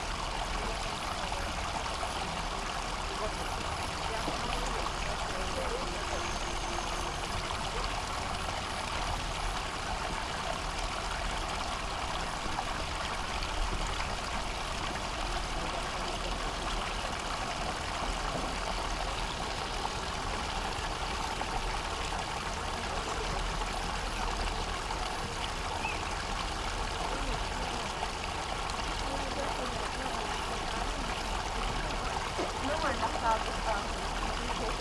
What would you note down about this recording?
lock for narrowboats, water overrun, Castle Mill Stream. (Sony PCM D50)